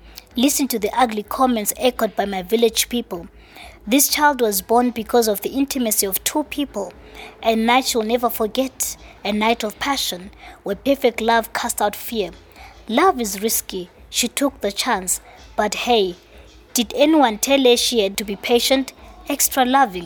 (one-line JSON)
{"title": "Makokoba, Bulawayo, Zimbabwe - Soneni celebrates her mother…", "date": "2012-10-27 13:35:00", "description": "…and all the mothers raising children with disabilities. We recorded an interview and some of her poems with Soneni in her home. It’s about midday and a party was getting into full swing across the road…\nSoneni Gwizi is a writer and poet, an award-winning activist for the rights of women and differently abled people, a broadcaster with ZBC and currently an UNWTO ambassador 2013.", "latitude": "-20.15", "longitude": "28.59", "altitude": "1342", "timezone": "Africa/Harare"}